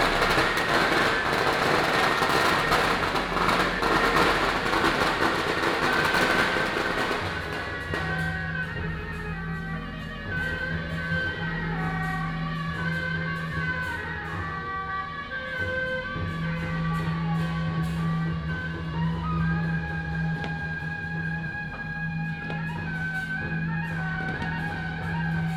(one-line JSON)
{"title": "大仁街, Tamsui District - Temple Fair", "date": "2017-05-30 18:48:00", "description": "Temple Fair, Parade Formation, firecracker", "latitude": "25.18", "longitude": "121.44", "altitude": "45", "timezone": "Asia/Taipei"}